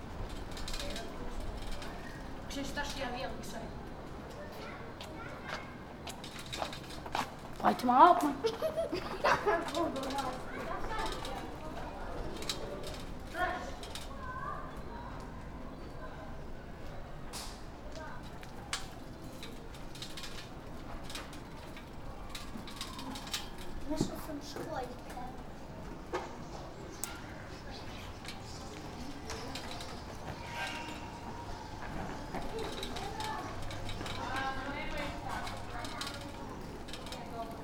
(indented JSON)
{
  "title": "streets, Novigrad, Croatia - eavning streets ambience",
  "date": "2013-07-15 21:36:00",
  "latitude": "45.32",
  "longitude": "13.56",
  "altitude": "6",
  "timezone": "Europe/Zagreb"
}